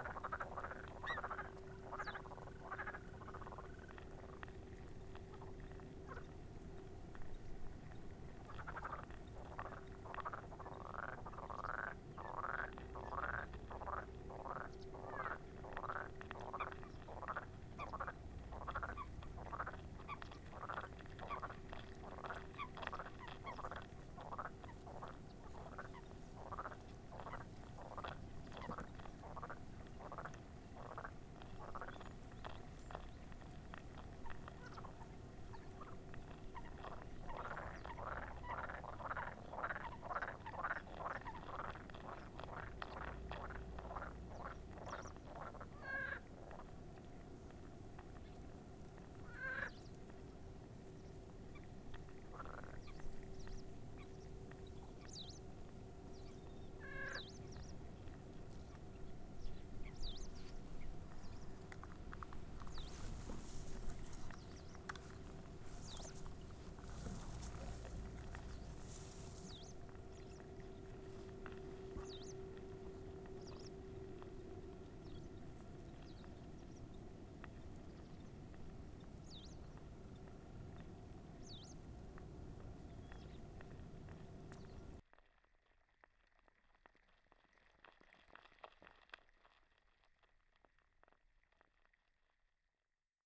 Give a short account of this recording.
Recording of frogs chorus around noon-with the Zoom H6, simultaneously above and underwater (hydrophone). It was first time I have heard frogs in this industrial lake.